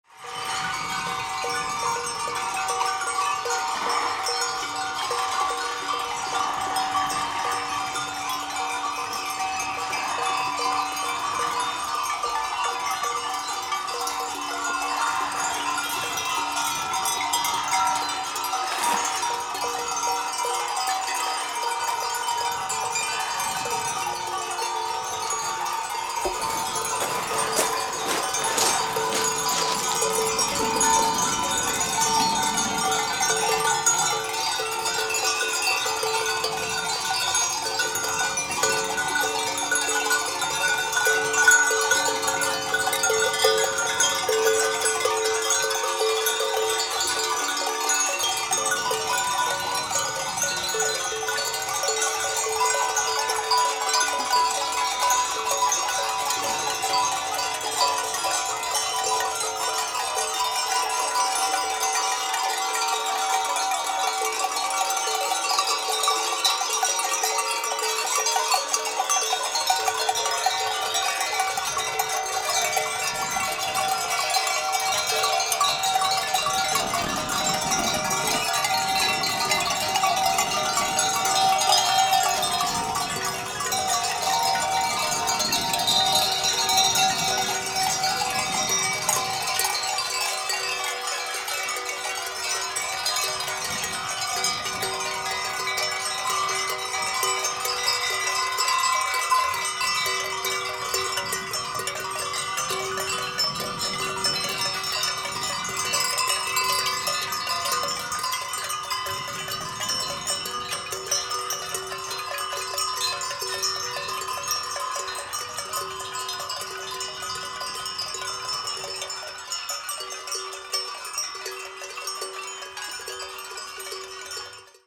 Patmos, Liginou, Griechenland - Ziegenherde
ca. 80 Ziegen mit ihren Glocken.
Juni 2002
Patmos, Greece, June 2002